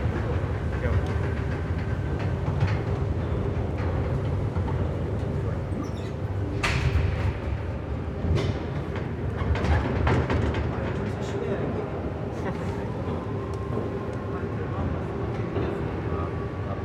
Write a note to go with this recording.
Hamburg, St.Pauli ALter Elbtunnel, a short walk downstairs, a few steps into the tunnel, then back and up with one of the larger elevators, (Sony PCM D50, Primo EM272)